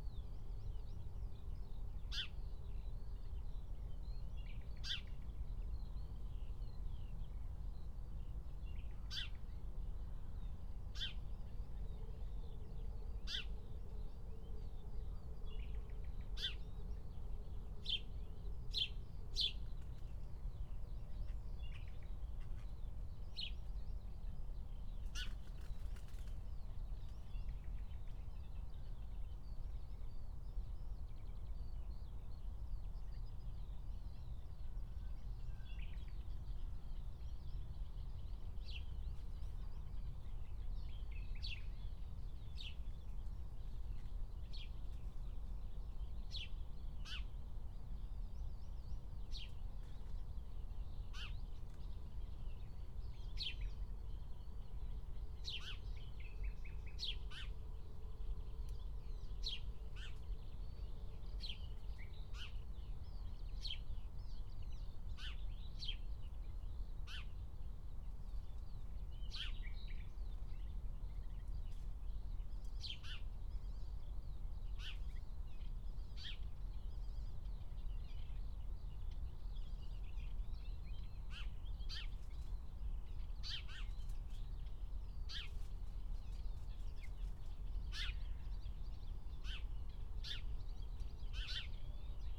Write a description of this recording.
06:00 Berlin, Tempelhofer Feld